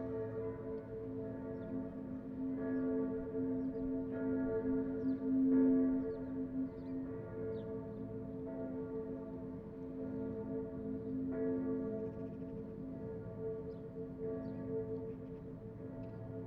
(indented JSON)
{
  "title": "marseille vollée de cloche au loin",
  "description": "amb enregistrée au zoom H2 24/01/2010 port de marseille 10 heure",
  "latitude": "43.29",
  "longitude": "5.36",
  "altitude": "27",
  "timezone": "Europe/Berlin"
}